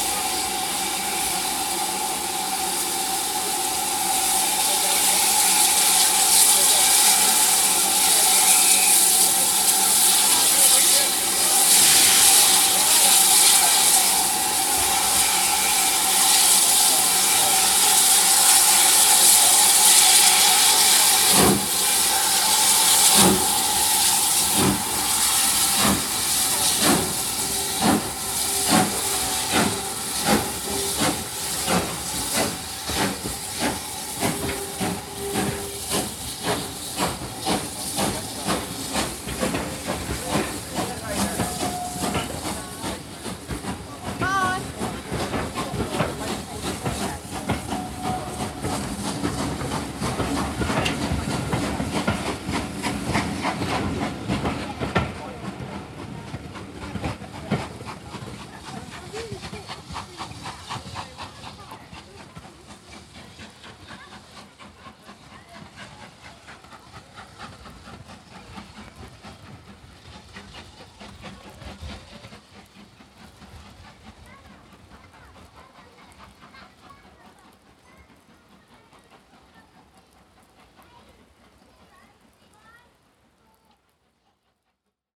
Gilbert Rd, Swanage, UK - Swanage Steam Railway Departure
5.20pm train departing Swanage for Norden. Recorded using the on-board microphones of a DR-05 with windshield.
23 August 2017